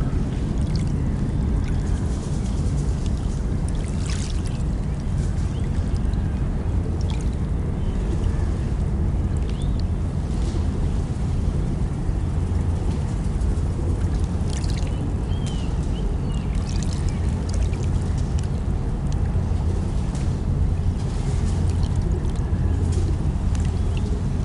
Greenwich, UK - Pigeons Nesting by the Lapping Thames
Recorded with a stereo pair of DPA 4060s and a Marantz PMD661.
London, UK, 24 January 2017, 16:25